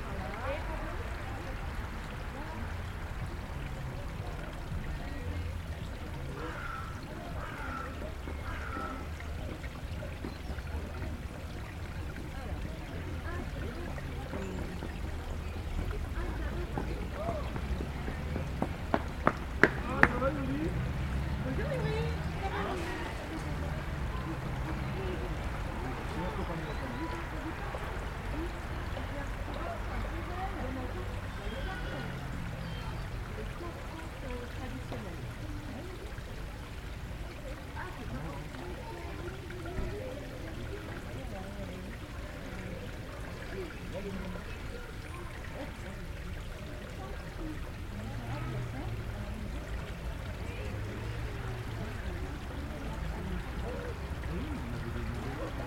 Dans le Jardin des plantes, rare chant de fauvette en septembre.